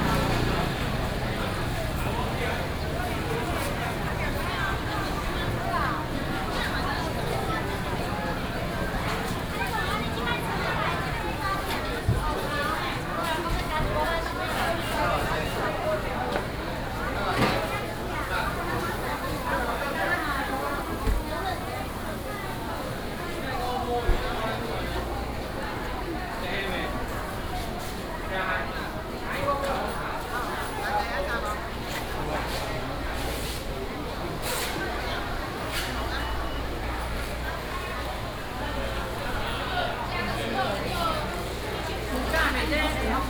{"title": "霧峰公有零售市場, Taichung City - traditional market", "date": "2017-09-19 09:50:00", "description": "traditional market, traffic sound, vendors peddling, Binaural recordings, Sony PCM D100+ Soundman OKM II", "latitude": "24.07", "longitude": "120.70", "altitude": "60", "timezone": "Asia/Taipei"}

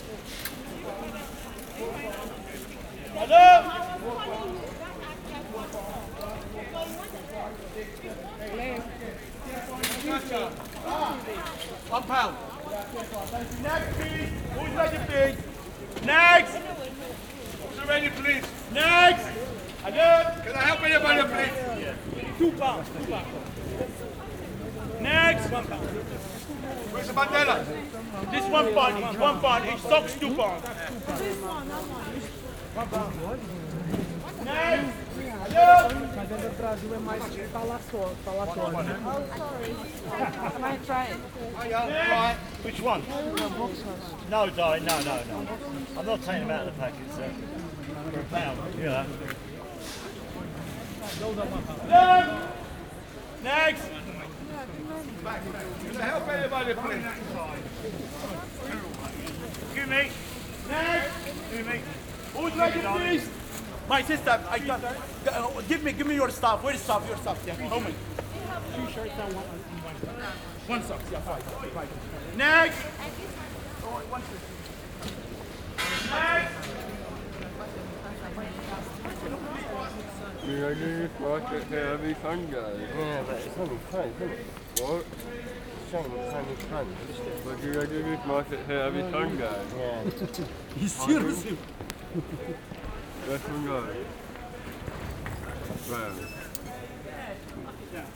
{"title": "London, market Middlesex Street - shoes and socks", "date": "2010-10-03 11:00:00", "description": "London, sunday morning, market at Middlesex Street, guys selling shoes and socks", "latitude": "51.52", "longitude": "-0.08", "altitude": "24", "timezone": "Europe/London"}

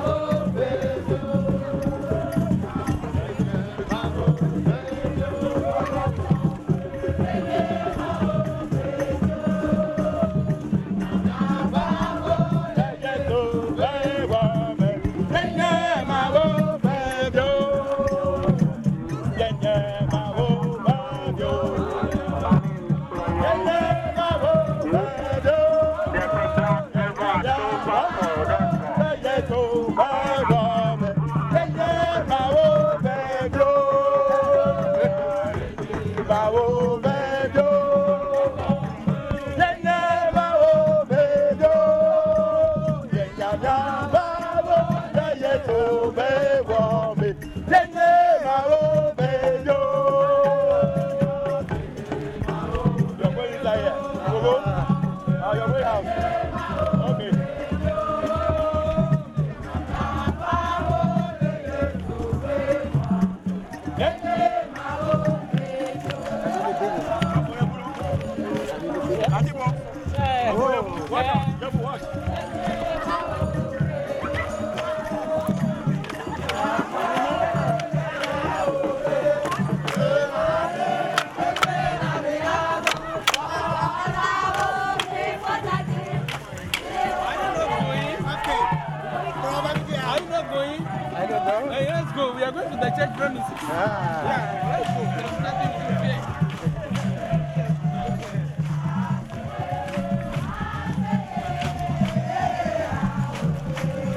{"title": "Togbe Tawiah St, Ho, Ghana - church of ARS service", "date": "2004-08-26 17:30:00", "description": "church of ARS (Apolistic Revelation Society)'s service is a Ghanean church with christan and african roots. Their profet is called CHARLES KWABLA NUTORNUTI WOVENU He was a concious objector to the British army. October 31st 1939 the holy ghost came down omn him and he started to sing and preach. We were picked up at main street with a procession with people dressed in white, some holding candles and drumsothers playing drums. some pictures you can see @ my blogspot Lola Vandaag (Lola Radio)", "latitude": "6.61", "longitude": "0.47", "altitude": "503", "timezone": "Africa/Lome"}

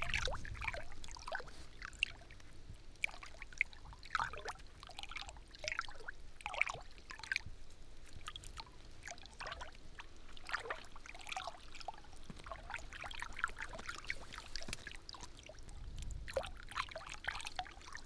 {"title": "Culliford Tree Barrows, Dorset, UK - water collecting in a hay bale", "date": "2015-10-01 15:10:00", "description": "Part of the Sounds of the Neolithic SDRLP project funded by The Heritage Lottery Fund and WDDC.", "latitude": "50.67", "longitude": "-2.43", "altitude": "133", "timezone": "Europe/London"}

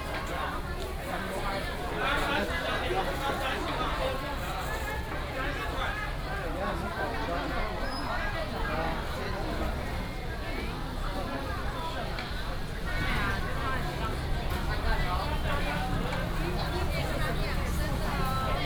{"title": "Ren’ai Rd., Zhudong Township, Hsinchu County - in the traditional market", "date": "2017-01-17 11:14:00", "description": "Walking in the traditional market", "latitude": "24.74", "longitude": "121.09", "altitude": "123", "timezone": "GMT+1"}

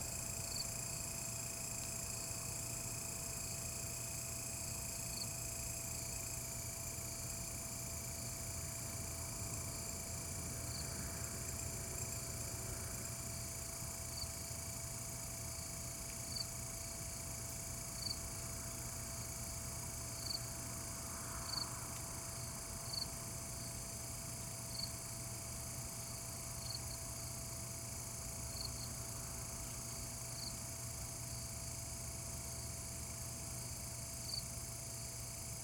{"title": "桃米紙教堂, 桃米里桃米巷 - Insect sounds", "date": "2016-09-13 21:36:00", "description": "Insect calls\nZoom H2n MS+XY", "latitude": "23.94", "longitude": "120.93", "altitude": "471", "timezone": "Asia/Taipei"}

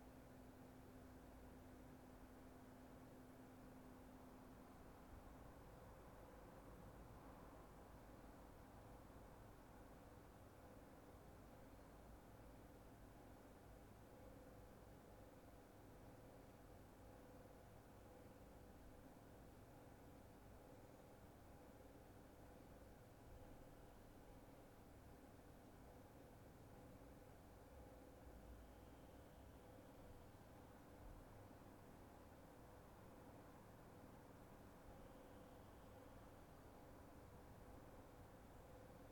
{"title": "Boskapel, Buggenhout, België - Boskapel", "date": "2019-02-03 14:31:00", "description": "[Zoom H4n Pro] Sound from inside the Boskapel, almost complete silence", "latitude": "51.00", "longitude": "4.21", "altitude": "41", "timezone": "GMT+1"}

